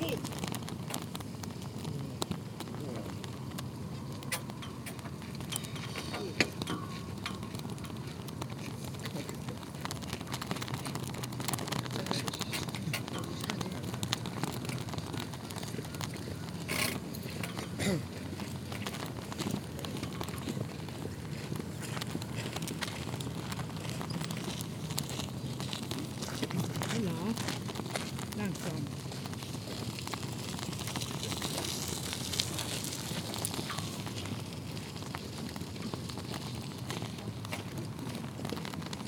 On the massive embankment protecting the polder, sound of two flags swaying into the wind.